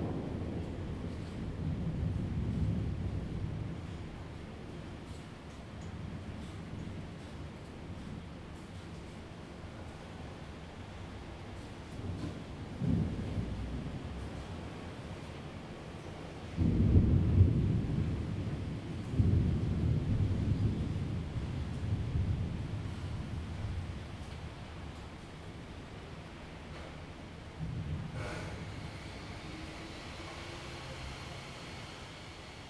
Thunderstorms, The sound of woodworking construction
Zoom H2n MS+XY+ Spatial audio
Taoyuan City, Taiwan, July 2017